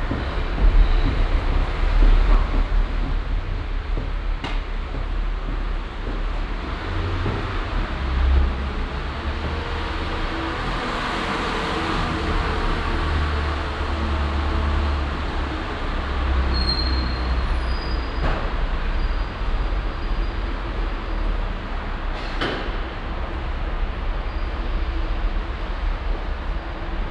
morgens im parkhaus - motorenresonanzen, schritte, lüftungsrohe
soundmap nrw:
social ambiences/ listen to the people - in & outdoor nearfield recordings
nové mesto platz, tiefgarage